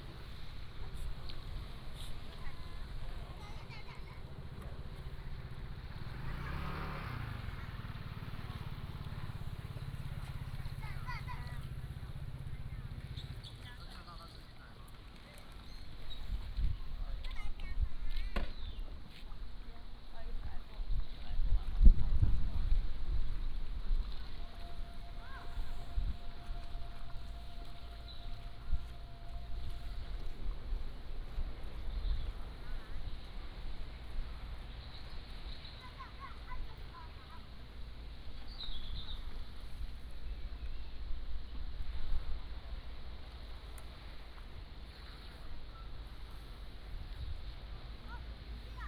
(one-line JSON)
{"title": "漁福漁港, Hsiao Liouciou Island - Small fishing port", "date": "2014-11-01 16:02:00", "description": "Small fishing port, Birds singing", "latitude": "22.35", "longitude": "120.39", "altitude": "7", "timezone": "Asia/Taipei"}